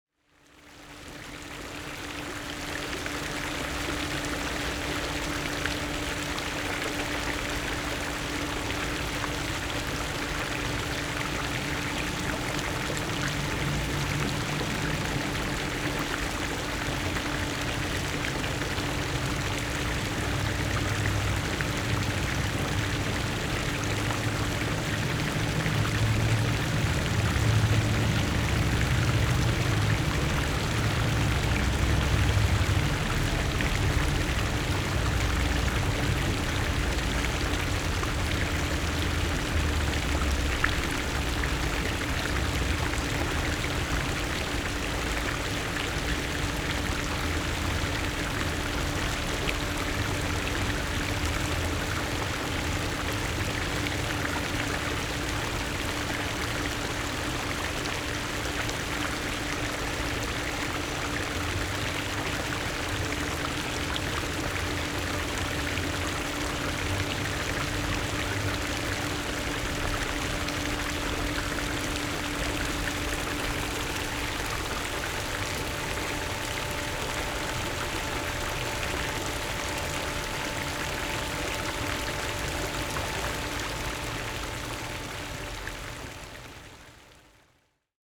Nangang District, Taipei - In the park
Fountains and motor noise, Rode NT4+Zoom H4n